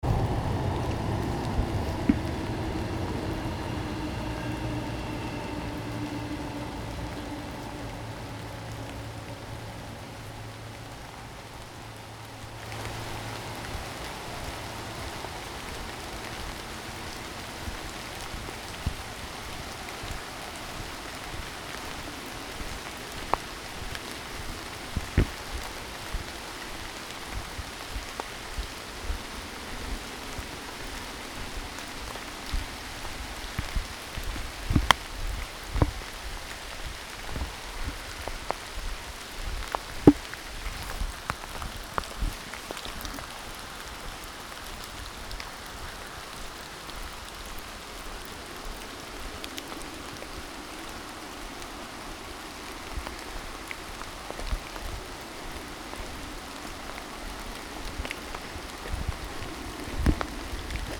Union St, Newcastle upon Tyne, UK - Ouseburn/City Stadium
Walking Festival of Sound
13 October 2019
Sound of train and rain